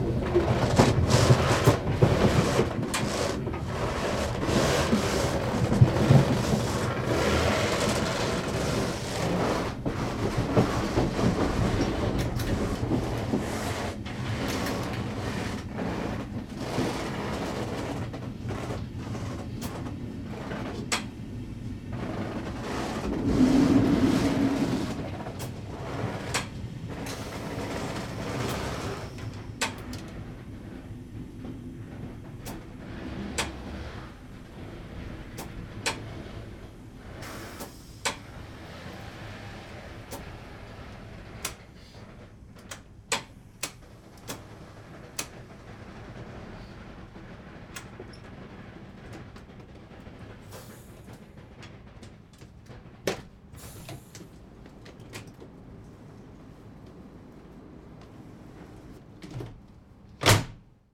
hagen, replacement train - train stops
07.01.2009 22:57 train stops, heavy noise at the elastic connection between the two wagons.
Deutschland, 7 January 2009, ~23:00